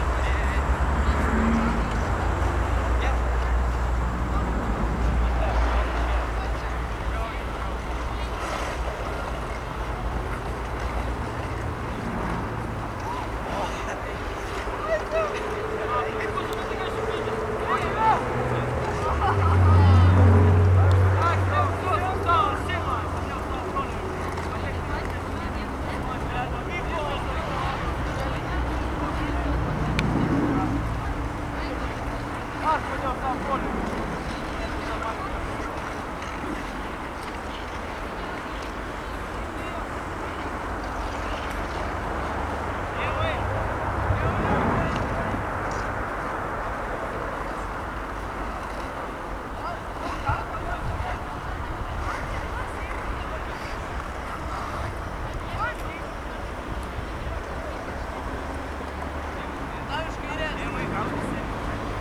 Lithuania, Utena, public skating rink
public skating rink in our town